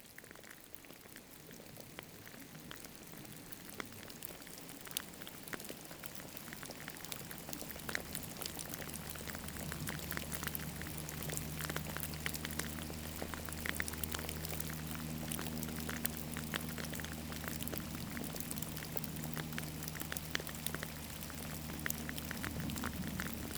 Saint-Martin-de-Nigelles, France - Rain
Near the church, rain is falling on gravels. The sad story is that the church is closed since a long time as it's collapsing inside. Fleeting, a sound of the town hall bell.
9 August 2017, 16:00